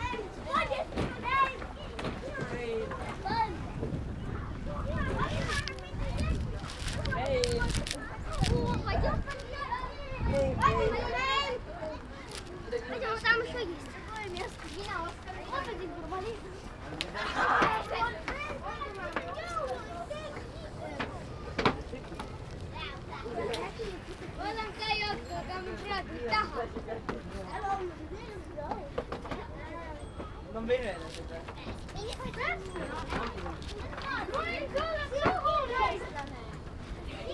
Stroomi Beach Tallinn, Carlo binaural walk
recording from the Sonic Surveys of Tallinn workshop, May 2010
Tallinn, Estonia, 21 May, 16:26